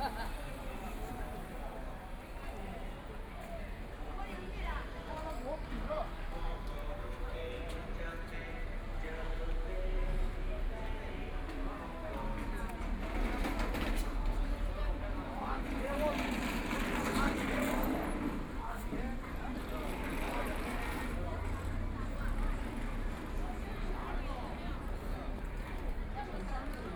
Yuyuan Garden, Shanghai - Tourist area
Shopping street sounds, The crowd, Bicycle brake sound, Walking through the old neighborhoods, Traffic Sound, Binaural recording, Zoom H6+ Soundman OKM II
Shanghai, China